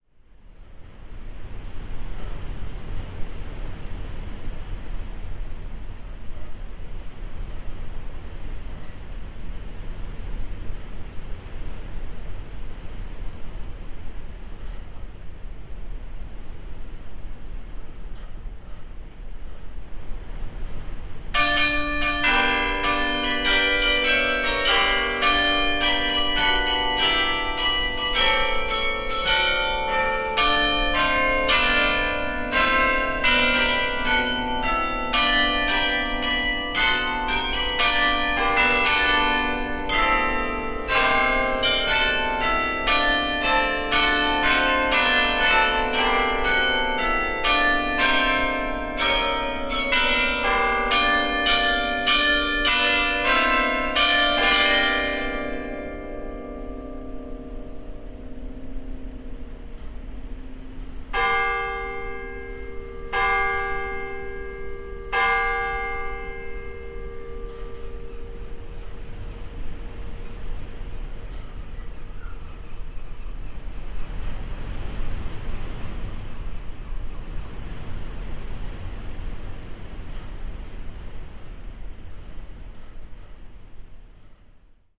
Carillon, Dunkerque, France - Carillon of the Beffroi, Dunkerque, 3 p.m.
Carillon of the Beffroi in Dunkirk, recorded high up in the bell chamber during a force 8 gale. Zoom H4n.
23 December 2013